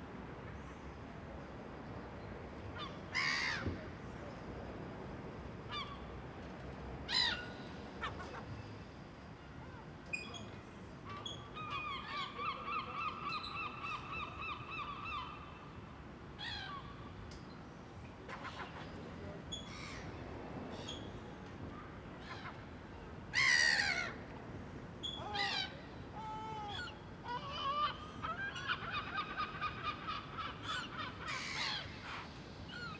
recorded with KORG MR-2, seagulls